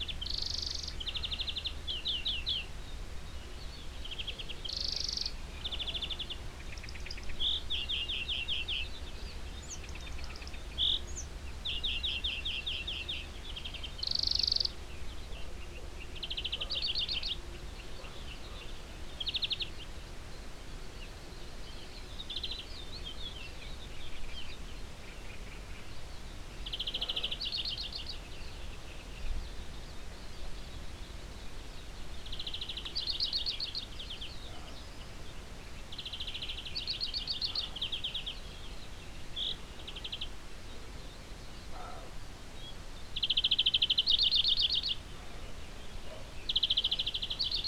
Aukštadvario seniūnija, Litauen - Lithuania, farm house, countryside in the mornig
Behind the barn in the morning time on a mellow warm summer day. the sounds of morning birds, cicades and in the distance a dog from a nearby farm house.
international sound ambiences - topographic field recordings and social ambiences
July 2015